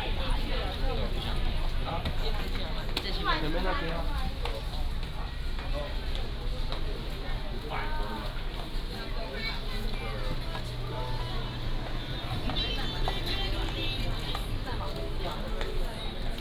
Sec., Beimen Rd., East Dist., Tainan City - Walking in the shopping street
Walking in the shopping street, Various store sounds, Traffic sound